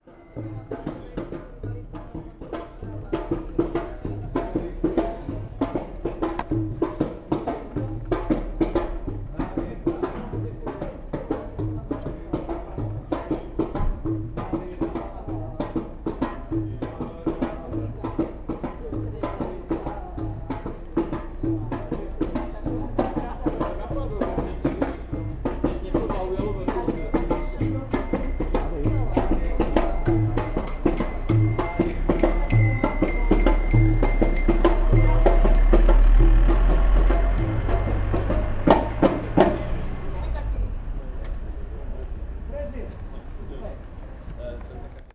Krishna walk
two krishna guys are walking on the street make sound of drum and bell
21 May 2011, ~14:00